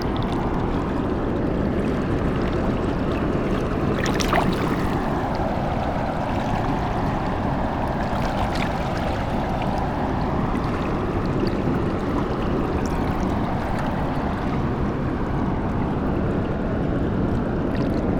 near by dam heavily disturbs waters of river drava
9 May 2014, ~19:00